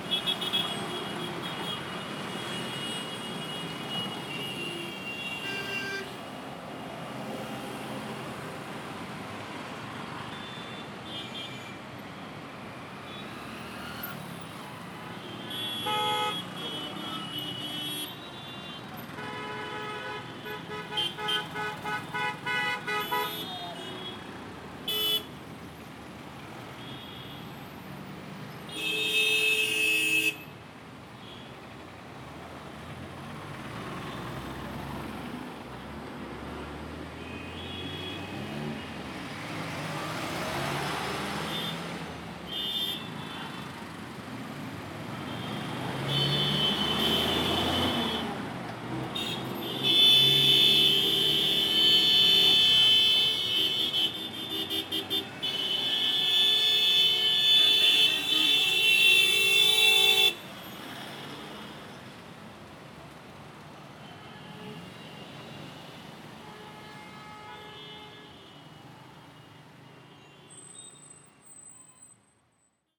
{"title": "Pandit Bhagwan Sahay Vats Vitthi, Aviation Colony, INA Colony, New Delhi, Delhi, India - 17 Its safer, they say", "date": "2016-02-13 12:16:00", "description": "Typical symphony of horns in a everyday traffic. Supposedly \"it is safer\", to announce your presence with a horn.", "latitude": "28.57", "longitude": "77.21", "altitude": "220", "timezone": "Asia/Kolkata"}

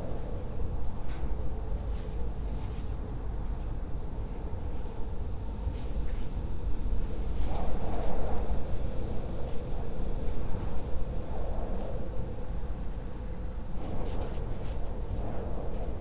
{"title": "Teofila Mateckiego, Poznan - bedroom door gap", "date": "2020-03-15 10:17:00", "description": "wind gushing through a narrow gap of a sliding door. metal sheet balcony wall bends in the wind. wooden wind chime on the neighbor's balcony. (roland r-07)", "latitude": "52.46", "longitude": "16.90", "altitude": "97", "timezone": "Europe/Warsaw"}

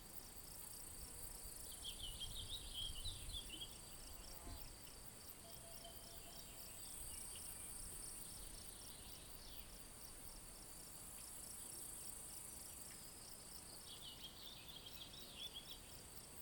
{"title": "Buzen, Valchiusa TO, Italia - Trees", "date": "2019-07-14 10:30:00", "description": "Trees - HerreraDos (experimental Folk - Fieldrecordings)", "latitude": "45.49", "longitude": "7.72", "altitude": "1203", "timezone": "Europe/Rome"}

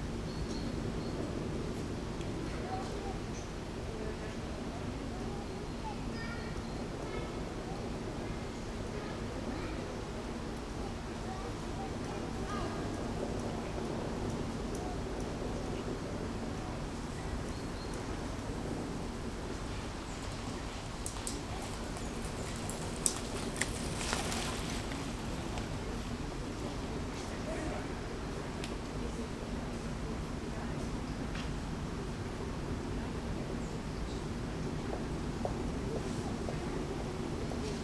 {"title": "berlin wall of sound-near erlendgrund. j.dickens160909", "latitude": "52.59", "longitude": "13.21", "altitude": "34", "timezone": "Europe/Berlin"}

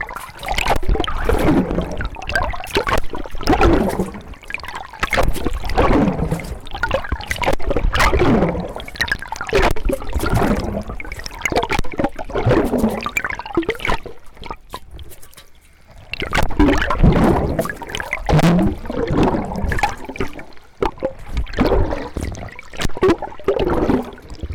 {"title": "Schalkwijk, Pont, Schalkwijk, Netherlands - ferry, boats", "date": "2022-09-15 15:30:00", "description": "ferry & boats on the river Lek, Zoom H2n + 2x hydrophones", "latitude": "51.96", "longitude": "5.22", "altitude": "1", "timezone": "Europe/Amsterdam"}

